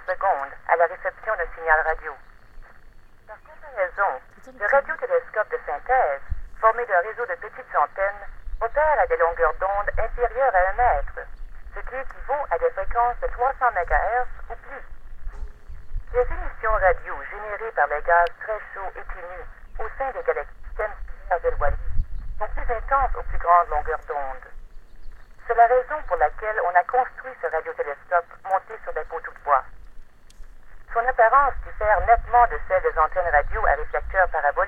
Dominion Radio Astrophysical Observatory Okanagan-Similkameen D, BC, Canada - Introduction at the gate
An observatory hidden in the mountains, discovered by accident during a drive through Canada.
One can visit the site alone by walking around outside the facility, thanks to a series of weather-worn boxes that playback audio recordings in French-Canadian or English explaining the history of the now closed observatory.
This is one of the first boxes near the entrance of the place.
23 July 2011